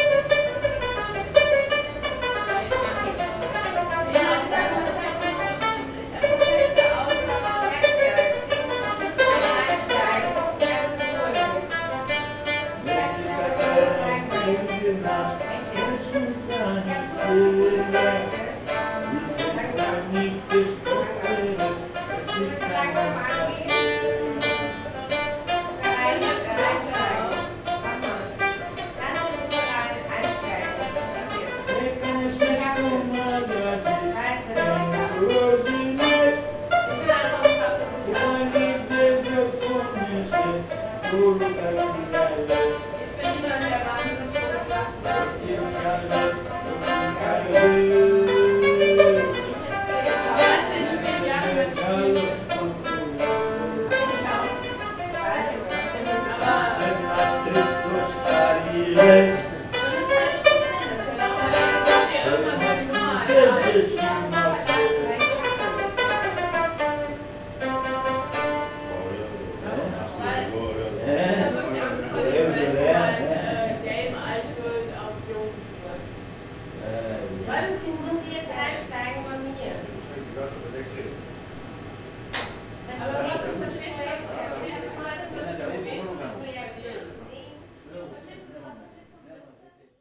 berlin: rosenthaler straße: café cinema - the city, the country & me: rebetiko singer
old man sings a rebetiko song, ventilation of the smoking room
the city, the country & me: january 31, 2014